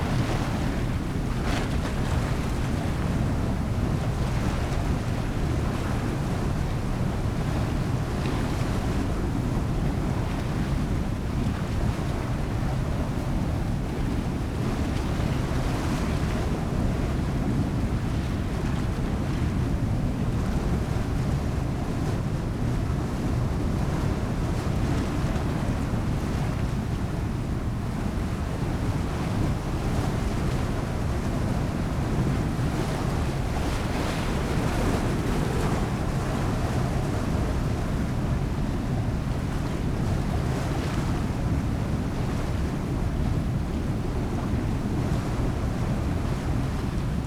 Lithuania, Nemeiksciai, at the dam
spring waters are furiuos